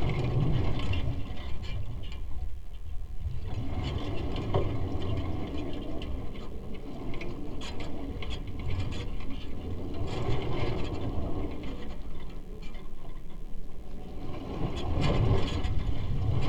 Punnetts Town, UK - Wire Fence in the Wind
Storm Barbara brought wet and windy weather to the north of the UK in particular. In the south it was less powerful and blew through on the evening of the 23rd December. First attempt at a contact mic recording using DIY piezo mic with Tascam DR-05 recorder.
Heathfield, UK, 23 December 2016, 19:00